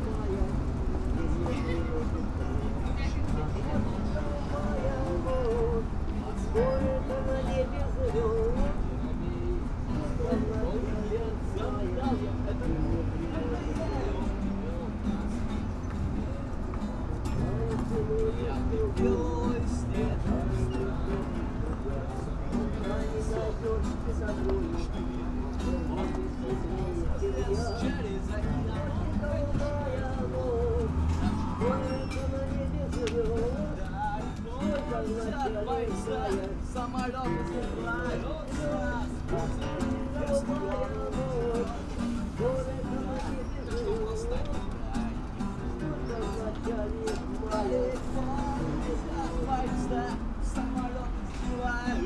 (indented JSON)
{
  "title": "пр. Ленина, Барнаул, Алтайский край, Россия - Barnaul, ЦУМ, два гитариста",
  "date": "2018-07-26 12:30:00",
  "description": "Two street musicians blend into cacophony, ambient street noise.",
  "latitude": "53.35",
  "longitude": "83.78",
  "altitude": "185",
  "timezone": "Asia/Barnaul"
}